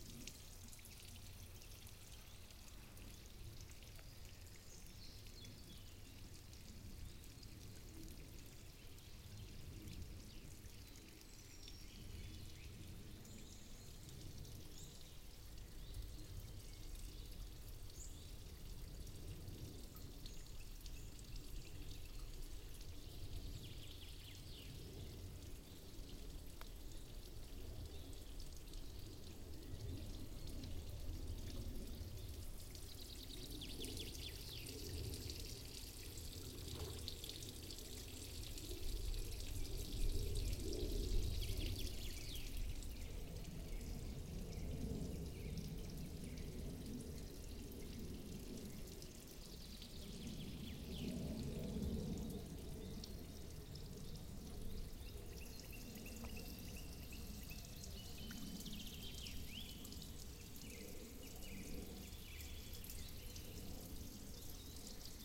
artificial pond, gutter
recorded june 1, 2008 - project: "hasenbrot - a private sound diary"